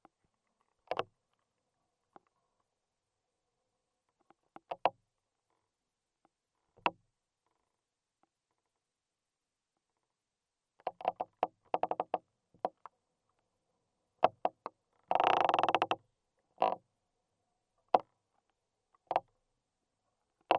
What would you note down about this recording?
Two firs are suffering with the wind. Crackling is recorded inside the tree, in a hole.